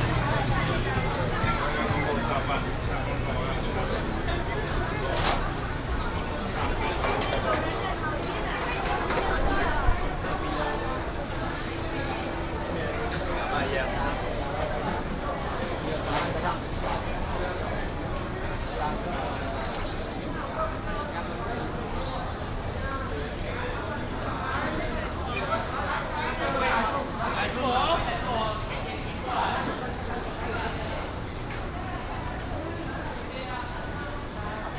Ren 3rd Rd., Ren’ai Dist., Keelung City - walking in the night market
Various shops sound, walking in the Street, night market
Ren’ai District, Keelung City, Taiwan, 16 July 2016